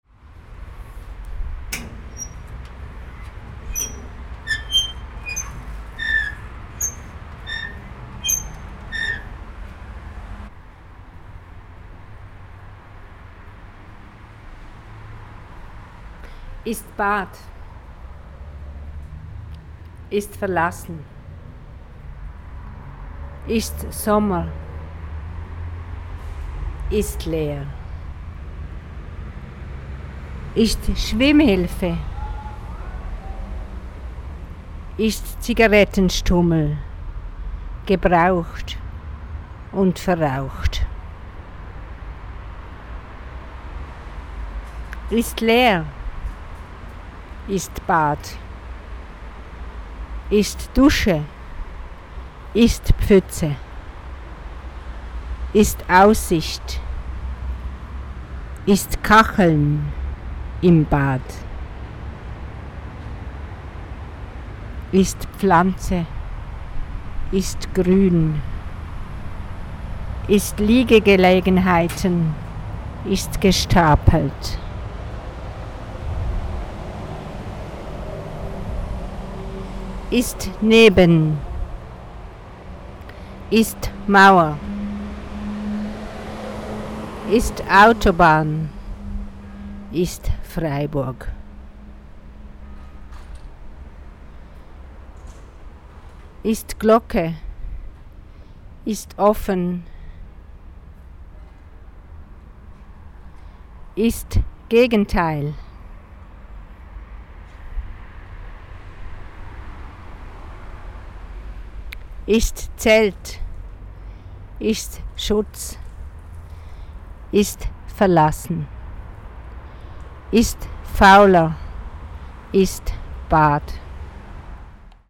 gesehen ist gesehen im faulerbad
ist gesehen ist spaziert ist verlassen ist oder doch nicht im Faulerbad oder Kunst auf der Liegewiese